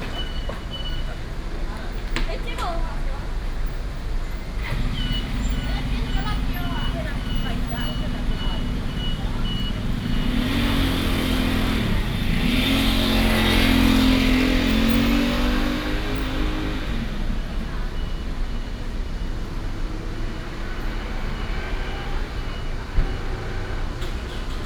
Zhongshan Rd., Xinwu Dist., Taoyuan City - At the corner of the road
At the corner of the road, traffic sound, Primary school students, Building Construction